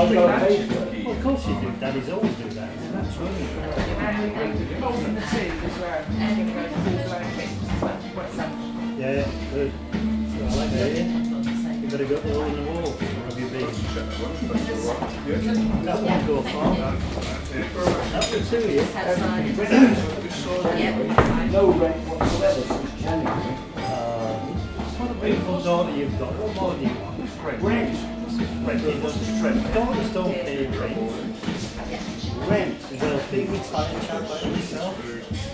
Ventnor, Isle of Wight, UK - British bar sounds (John L Armstrong)
Ventnor restaurant bar during local arts festival, customers chatting, ordering drinks and food. Waiters carrying food to dining tables.
5 May 2014, 13:00